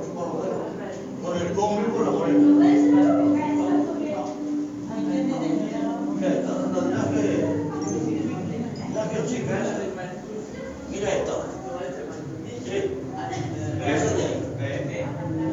tondatei.de: aquarium colonia st. jordi